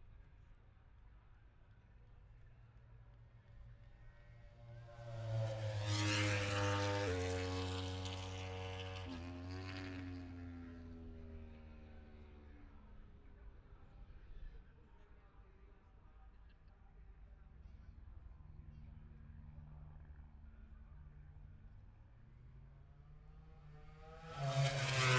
Silverstone Circuit, Towcester, UK - british motorcycle grand prix 2021 ... moto grand prix ...
moto grand prix qualifying two ... wellington straight ... dpa 4060s to Zoom H5 ...
England, United Kingdom, 28 August 2021, 14:10